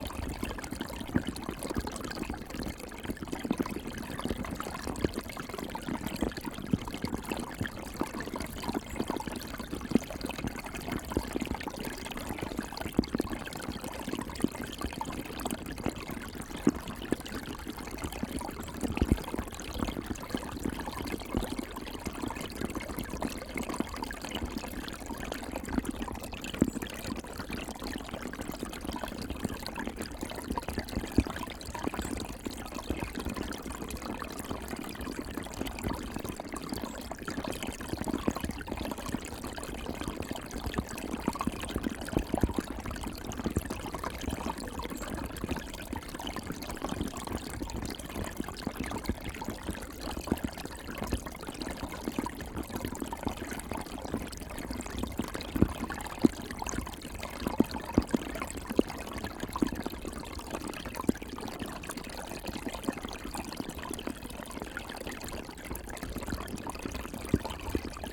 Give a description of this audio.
Dual MS recording of water flowing over rocks down a small cascade combined with hydrophone recording from the frozen surface of the pool into which the water is flowing.